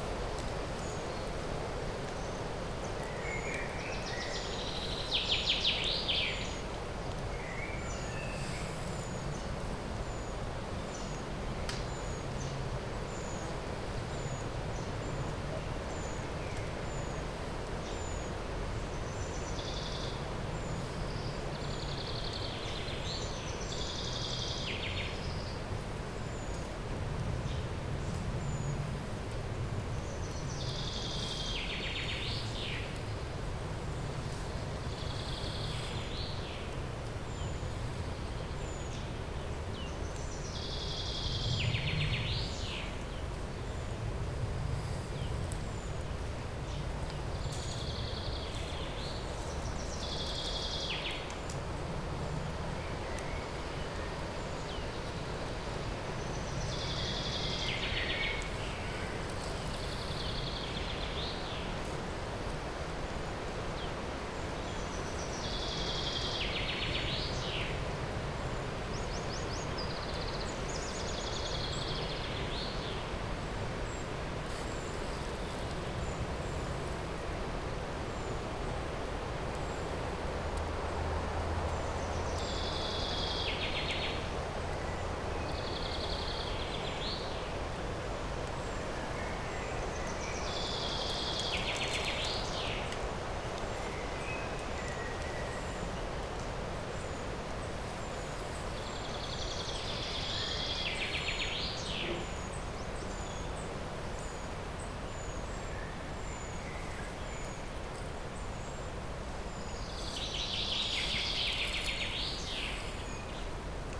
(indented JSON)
{
  "title": "Bonaforth, Höllegrundsbach Deutschland - Höllegrundsbach 02 no water during summer",
  "date": "2012-05-25 17:42:00",
  "description": "recording in the dry creek bed of the Höllegrundsbach. There is no water during summer or like now hot spring.",
  "latitude": "51.40",
  "longitude": "9.61",
  "altitude": "236",
  "timezone": "Europe/Berlin"
}